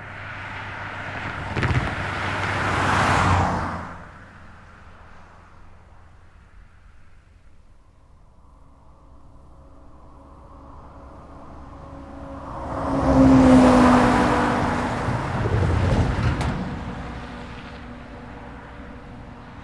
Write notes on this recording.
Train tracks crisscross the landscape around the mine. Here a new road (not on the satellite images, which are 3 years out of date) allow vehicles to pass over them without slowing too much.